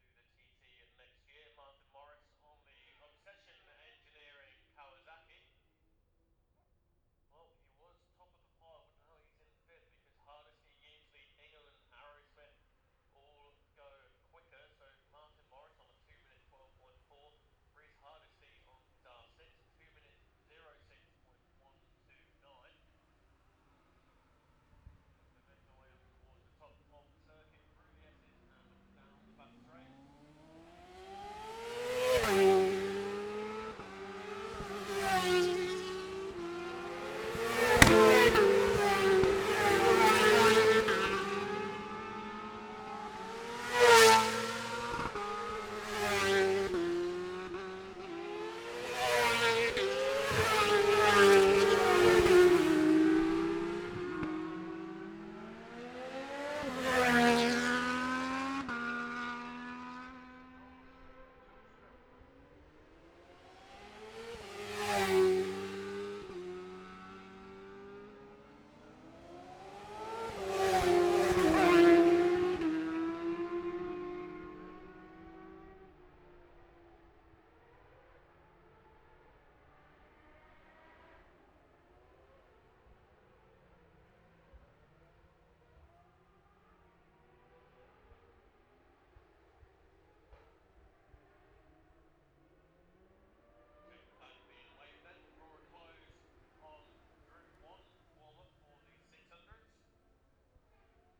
Jacksons Ln, Scarborough, UK - gold cup 2022 ... 600 group one practice ...
the steve henshaw gold cup 2022 ... 600 group one practice ... dpa 4060s on t-bar on tripod to zoom f6 ... red-flagged then immediate start ...